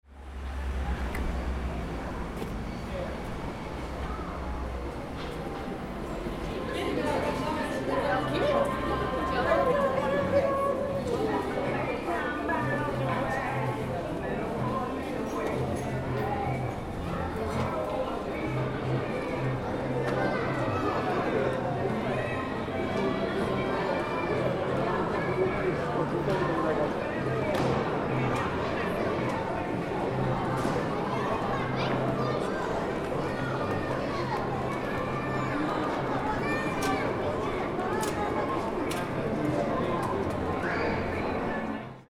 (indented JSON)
{
  "date": "2010-12-23 15:30:00",
  "description": "Varese, Einkaufszentrum, Dauerberieselung, Norditalien, Konsummeile",
  "latitude": "45.82",
  "longitude": "8.83",
  "altitude": "388",
  "timezone": "Europe/Rome"
}